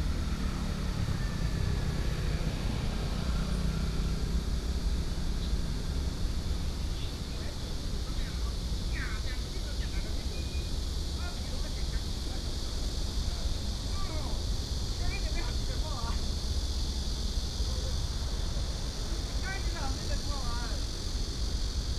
港口路, Toucheng Township - next to the parking lot
next to the parking lot, Cicadas sound, Birdsong, Very hot weather, Traffic Sound
Yilan County, Taiwan, 7 July, ~12:00